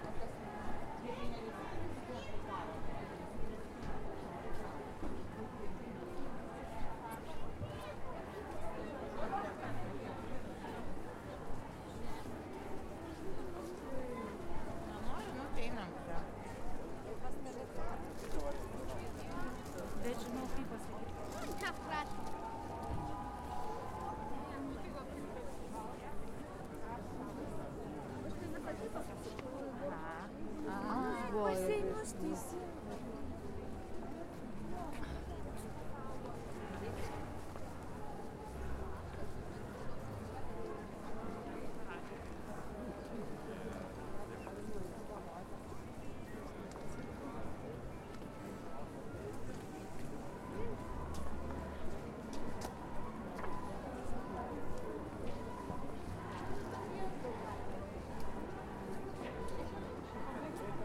Gedimino pr., Vilnius, Lithuania - Walking through St Casimir FAir
Sounds of the St. Casimir Fair; as I start recording a group of young people start singing in protest at something I"m not quite sure of. they are dressed as dinosaurs. At one point they are met by a group of Hare Krishna's coming the other way and the sounds merge in and out. We end at the vell tower and the cathedral with street music and crowd noise.